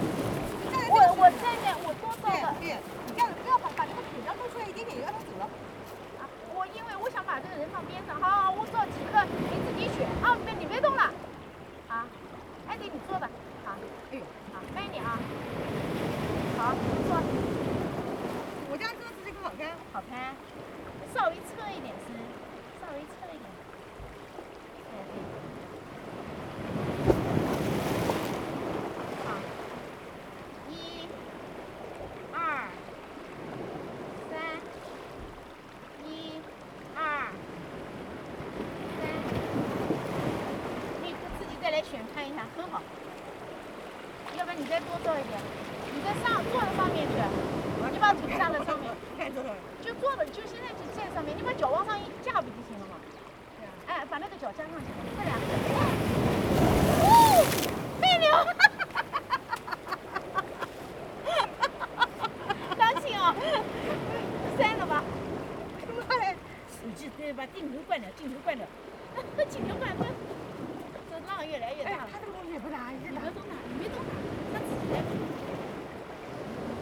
磯崎村, Fengbin Township - Small pier
Small pier, Sound of the waves, Very Hot weather
Zoom H2n MS+XY